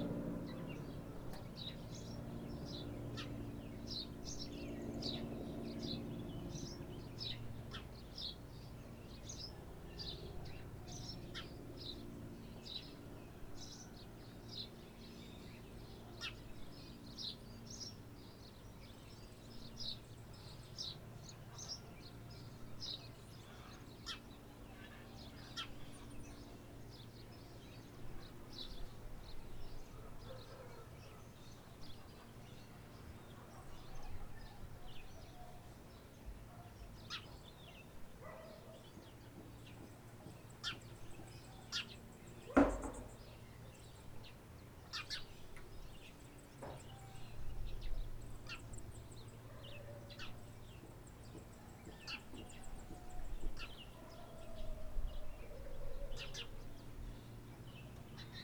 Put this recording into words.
3 TYPES D'HÉLICOS DIFFÉRENTS À LA SUITE CILAOS, ÎLE DE LA RÉUNION.